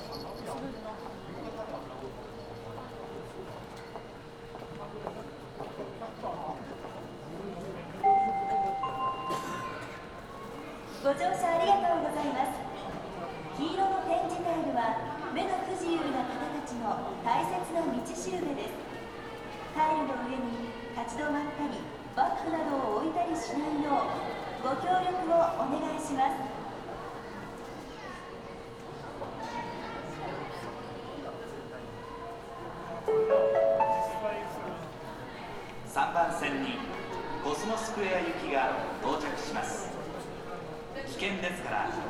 Osaka, Morinomiya subway station, platform - passengers gathering at the platform
cheerful conversations and machine whine. train arriving shortly. some announcements are made in female voice some in male. then convey different kind of information so it's easier to pick up the information you are looking for. train announced by a chime bell sound.